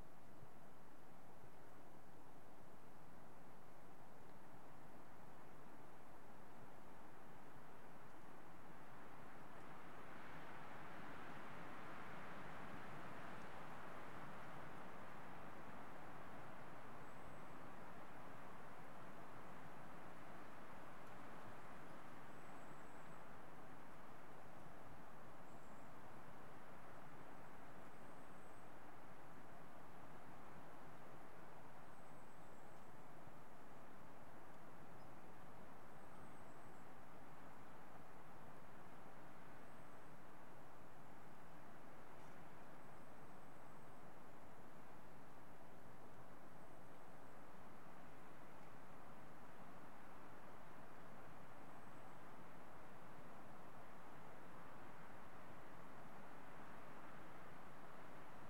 Caminho Lagoinha, Portugal - Lagoa Funda
This lagoon is located within the volcanic caldera of the Sierra de Santa Bárbara at about 900 meters altitude. It is surrounded by a varied endemic vegetation of Macaronesia. A windy day.
Recorded with Zoom Hn4 Pro.